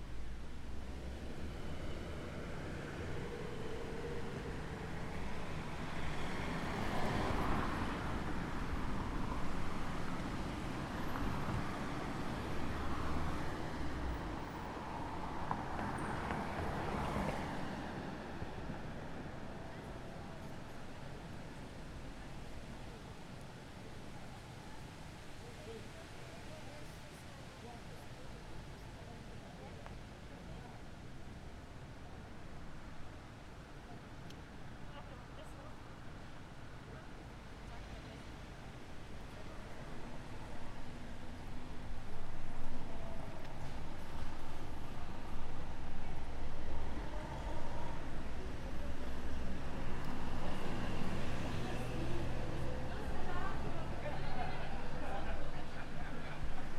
Slow walking down fast food street.
Recorded with Zoom H5 + AKG C568 B
7 June, 13:52